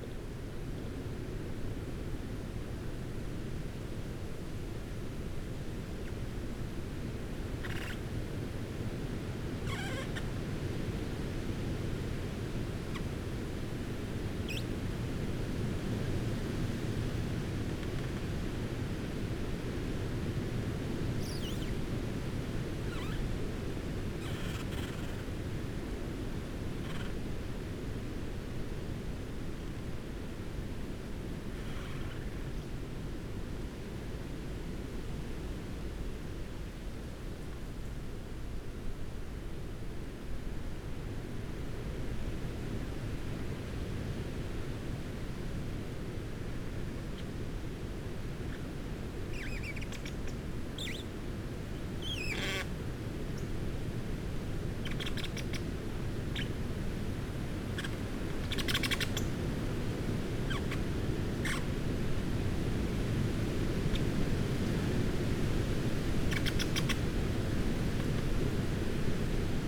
Luttons, UK - creaking tree branches ...
creaking tree branches ... add their calls to those of the birds that share the same space ... blue tit ... pheasant ... buzzard ... crow ... fieldfare ... blackbird ... treecreeper ... wren ... dpa 4060s in parabolic to MixPre3 ...
Malton, UK